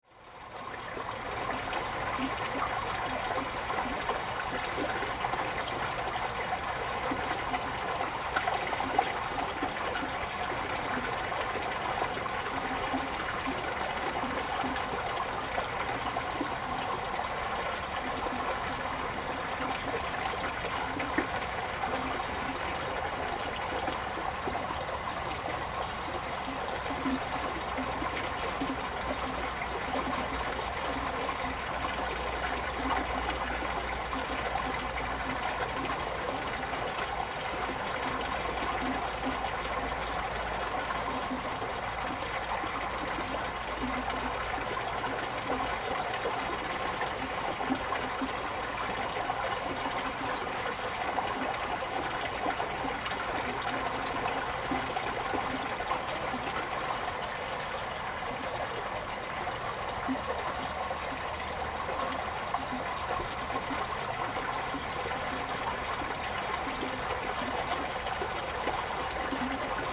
Paper Dome, 桃米里 Nantou County - sound of the Flow
sound of the Flow
Zoom H2n MS+XY